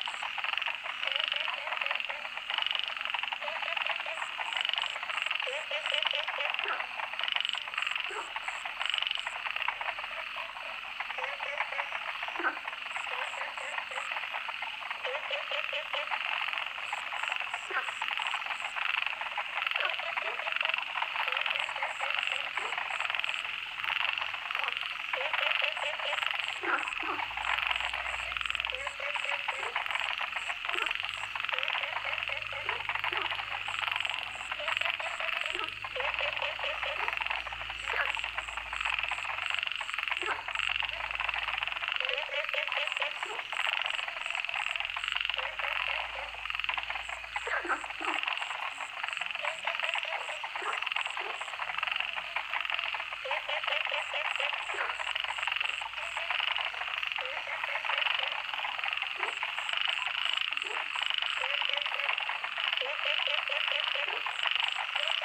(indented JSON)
{
  "title": "江山樂活, 桃米里Nantou County - Ecological pool",
  "date": "2016-04-19 20:02:00",
  "description": "Ecological pool, Various types of frogs, Frogs chirping\nZoom H2n MS+XY",
  "latitude": "23.93",
  "longitude": "120.89",
  "altitude": "769",
  "timezone": "Asia/Taipei"
}